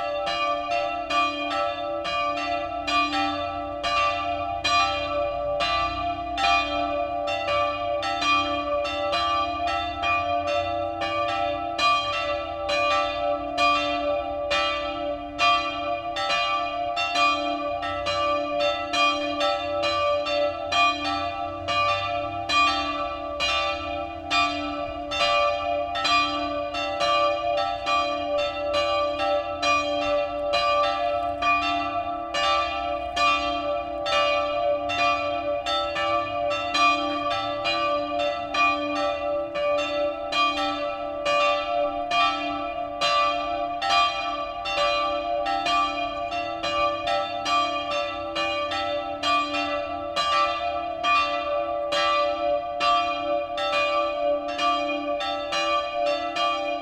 Altenberger Dom - church bells, evening service
churchbells of the Altenberger Dom callin g for evening church service
Odenthal, Germany, 11 August 2010